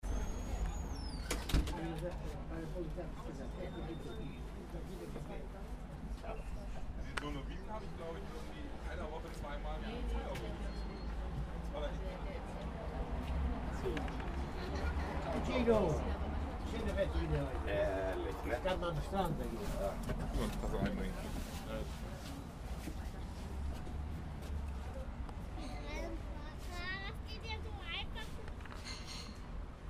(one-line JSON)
{"description": "Italian guy in front of his shop", "latitude": "53.56", "longitude": "9.96", "altitude": "18", "timezone": "Europe/Berlin"}